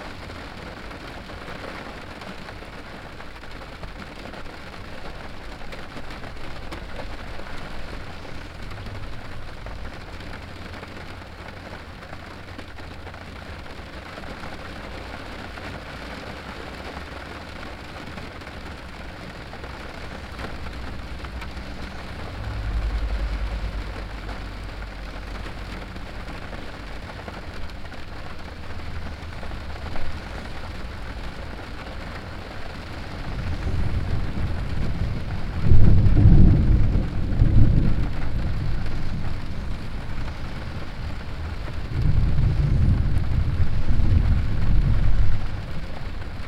St Pierre le Moûtier, N7, Rain and thunderstorm
France, rain, thunderstorm, car, road traffic, binaural